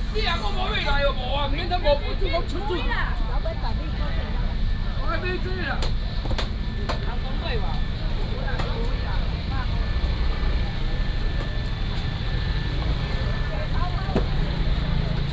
Magong City, Penghu County - Walking in the fish market
Walking in the fish market
22 October 2014, ~06:00